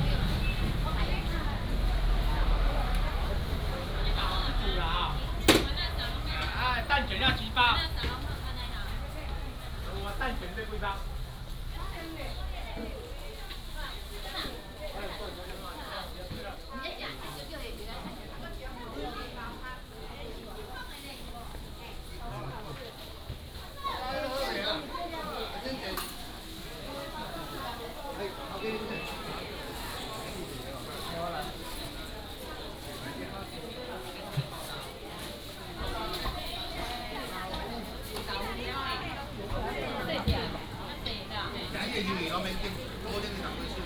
員林第一公有市場, Yuanlin City - Walking in the traditional market
Walk through the market, Traffic sound, Selling voice